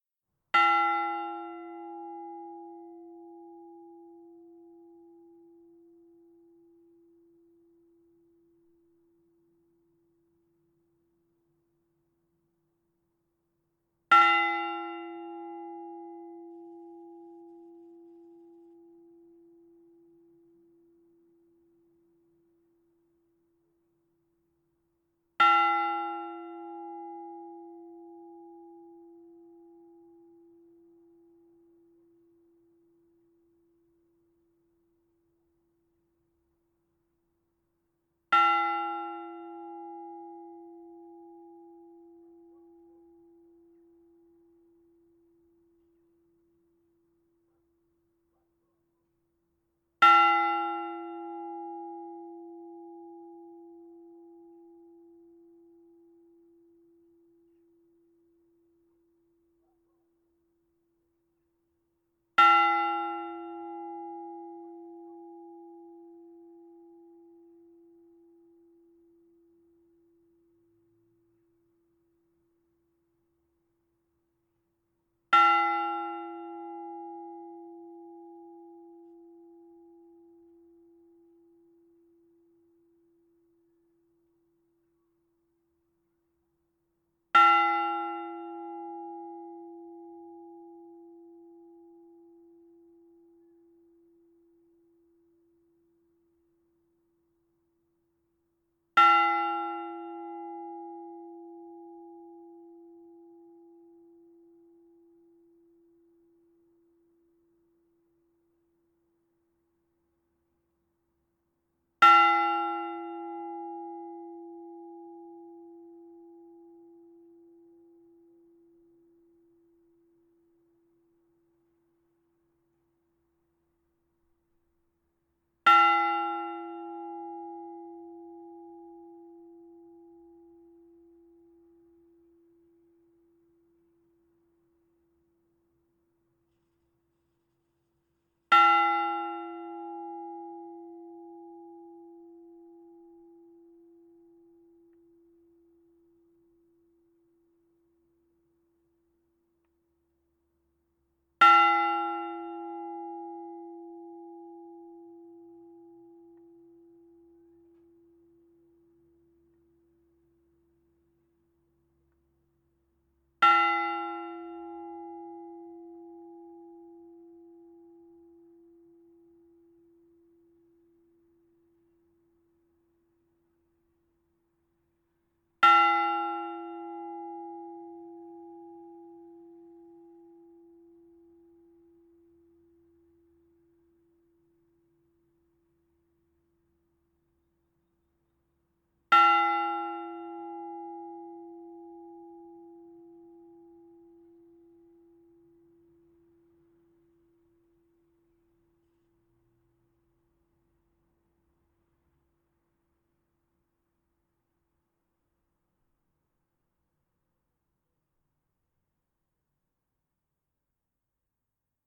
Pl. du Capitaine Nicod, La Sentinelle, France - La Sentinelle - Département du Nord - Église Ste Barbe - Tintements.
La Sentinelle - Département du Nord
Église Ste Barbe
Tintements.
Hauts-de-France, France métropolitaine, France